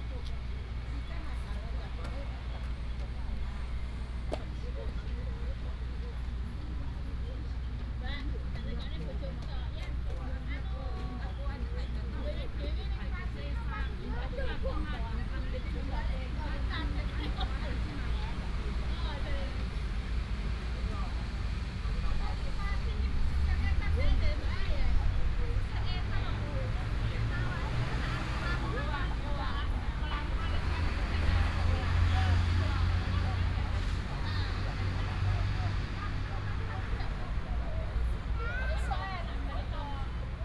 in the Park, Traffic sound, Excavator, Many elderly and foreign care workers, Binaural recordings, Sony PCM D100+ Soundman OKM II